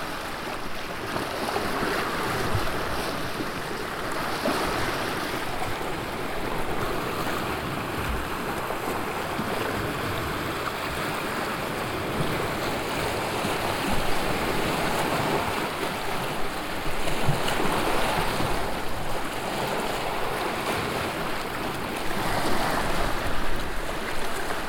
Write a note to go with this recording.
...in the middle of the night I had already heard the rigs go home and the winds picking up… a night/ day of bad business for the kapenta rigs… however, this recording became something like our signature sound during the Zongwe FM broadcasts of women across the lake…